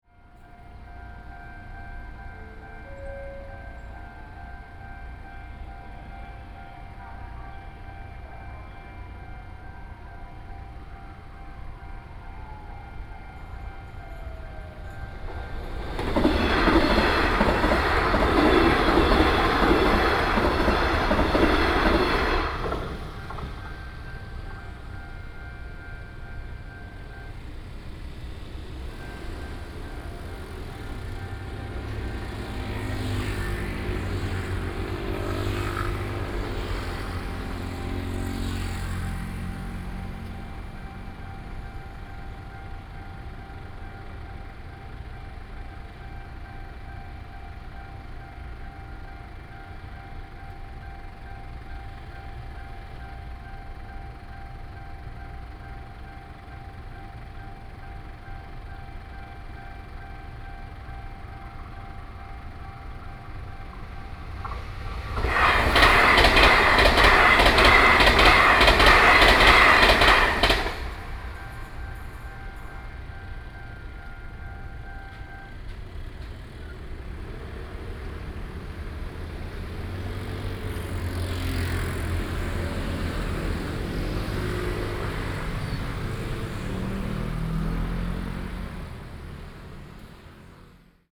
新農街二段209巷, Yangmei Dist. - Railroad Crossing
Railroad Crossing, train runs through, Traffic sound
Taoyuan City, Taiwan